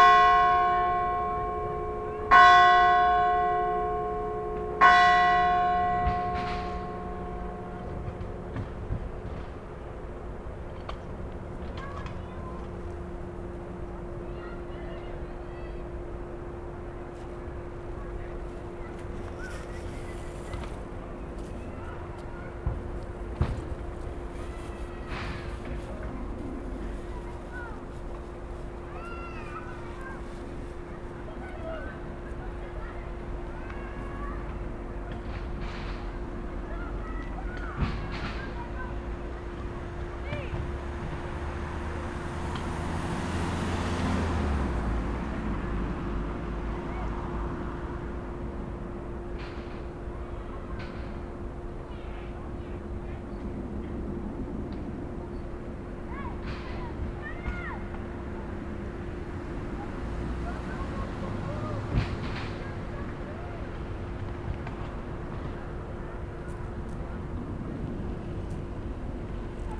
Eglise, Anglefort, France - Il est 18h
Sur un banc près de l'église d'Anglefort sonnerie de 18h, au loin un stade de skateboard et l'usine Ferropem .